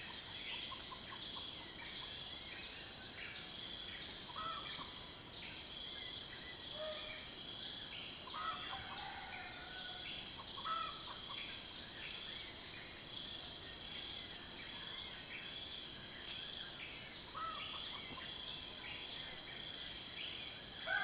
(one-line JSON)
{"title": "Unnamed Road, Chini, Pahang, Maleisië - dawn lake chini", "date": "2006-01-13 06:00:00", "description": "dawn at lake chini. we stayed in a simple hut hosted by the unforgettable mister Jones.", "latitude": "3.43", "longitude": "102.92", "altitude": "58", "timezone": "Asia/Kuala_Lumpur"}